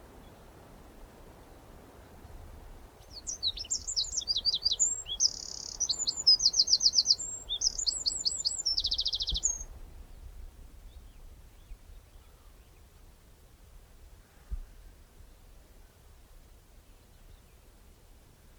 top ridgeway - grass blowing in breeze, birds chirping. In far distance a train goes by.
Dorset, UK